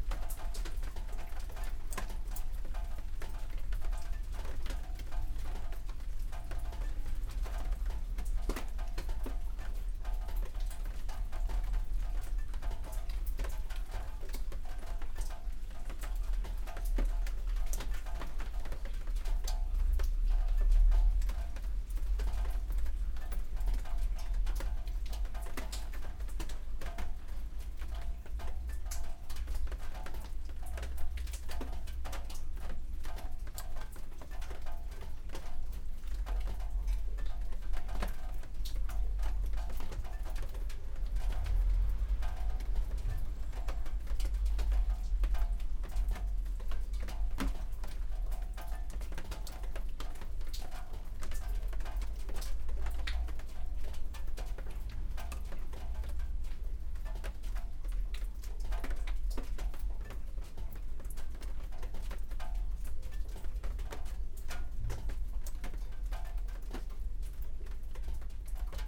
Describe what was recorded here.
A small storm is falling on an outhouse. It's not long, but in a few time there's a lot of rain. Drops clatter on a big plastic pane.